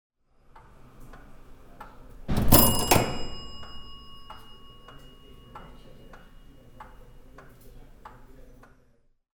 Uffculme, Devon, UK - Clocking in for work at Coldharbour Mill
This is a recording of the old "clocking in" mechanism at the entrance to Coldharbour Mill, a historic (and still working) yarn-spinning factory in Uffculme, Devon. I think to clock in to work at the factory you had a punch card which you marked by placing it in this big clock and hitting the lever, thus both stamping the card and producing this amazing (and very loud) sound!